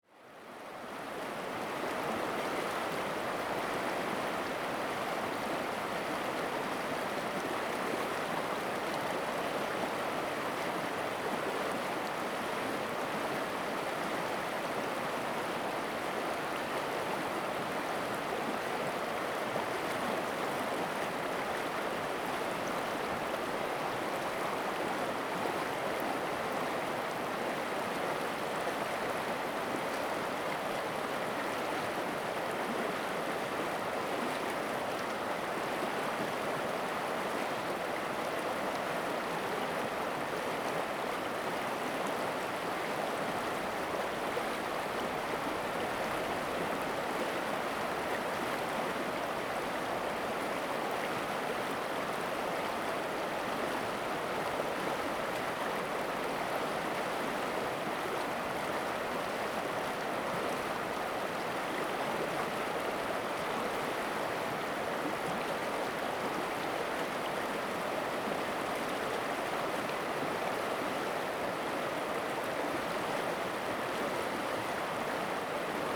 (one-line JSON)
{"title": "Taimali River, 金峰鄉 - Stream", "date": "2018-04-03 15:44:00", "description": "river, Stream sound\nZoom H2n MS+XY", "latitude": "22.59", "longitude": "120.96", "altitude": "67", "timezone": "Asia/Taipei"}